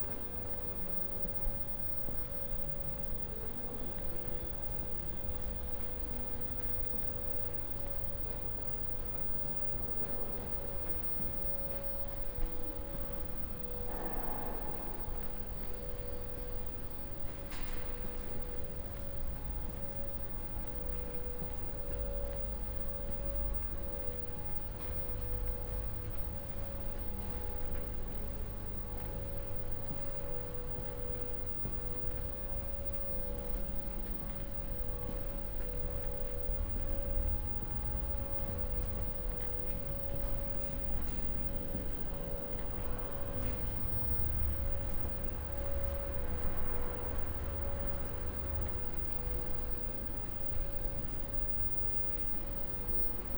alleecenter hamm - walk-through to West entrance
walking from upstairs, down the stairs of the escalator, along the closed shops to the West entrance doors and out…